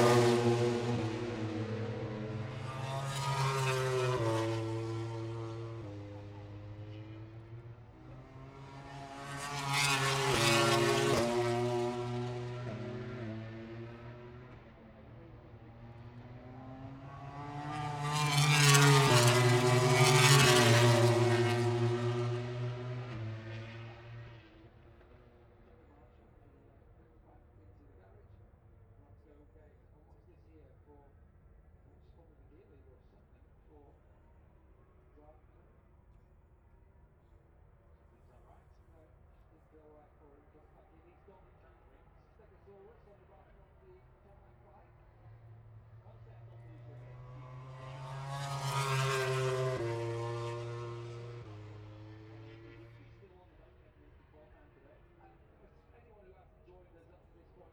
Silverstone Circuit, Towcester, UK - british motorcycle grand prix 2022 ... moto grand prix ......
british motorcycle grand prix 2022 ... moto grand prix free practice four ... outside of copse ... dpa 4060s clipped to bag to zoom h5 ...
England, United Kingdom, 6 August 2022, 13:30